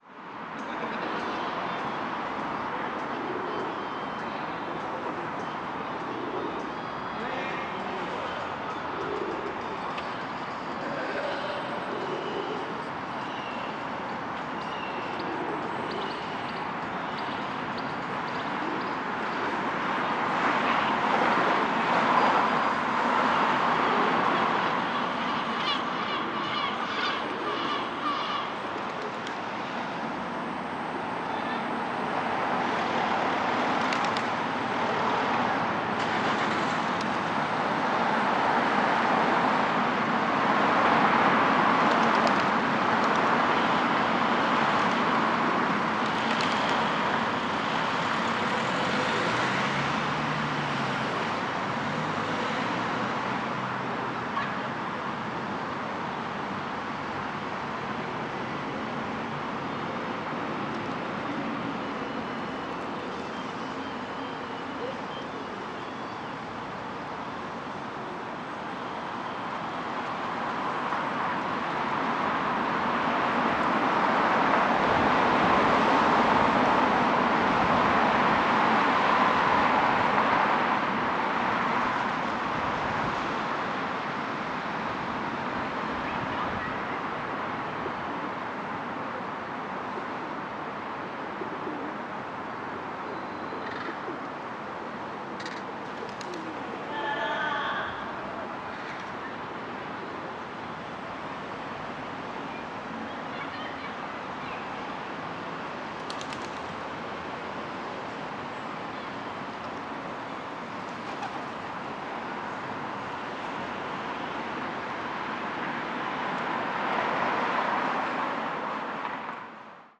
Student Housing Association, Donegall St, Belfast, UK - St Annes Cathedral-Exit Strategies Summer 2021
Recording of two taxi men having a discussion, a group of people laughing about, bird calls and sounds of their flight, a few vehicles driving through, and there is also a musical band heard in the far distance.